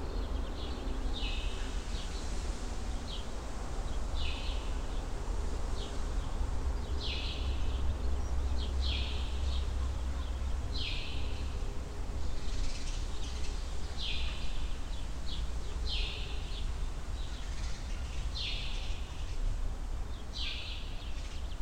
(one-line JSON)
{"title": "Chania 731 00, Crete, walk in the tunnel", "date": "2019-05-07 16:30:00", "description": "tunnel under the highway", "latitude": "35.51", "longitude": "23.95", "altitude": "45", "timezone": "Europe/Athens"}